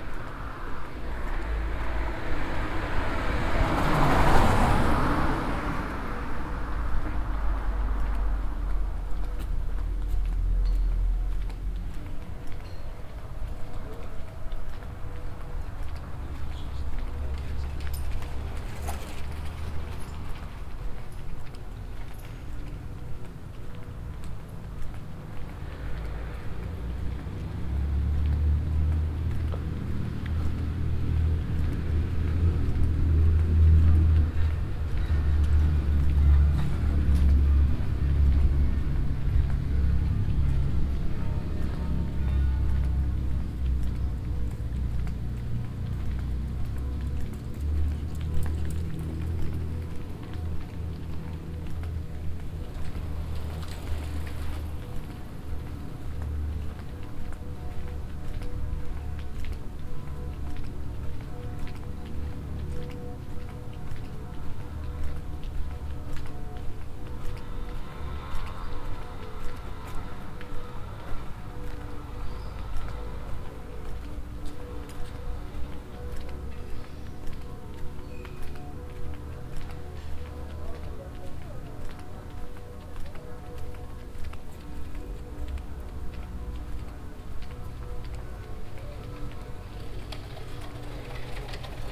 amsterdam, keizersgracht, morning bells
walking in the morning time along the keizergracht channel in the direction of the church morning bells
international city scapes - social ambiences and topographic field recordings
Amsterdam, The Netherlands